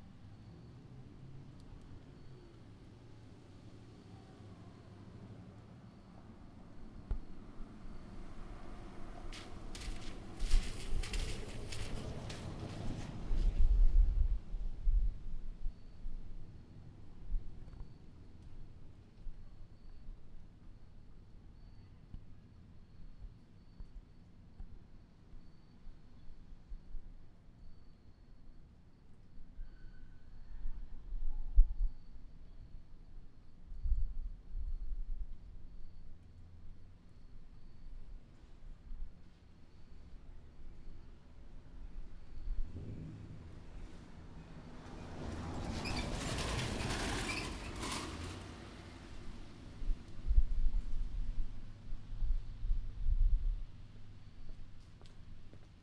Chambersburg, Trenton, NJ, USA - Night in Trenton
Rainy evening in Trenton, cars driving by
8 October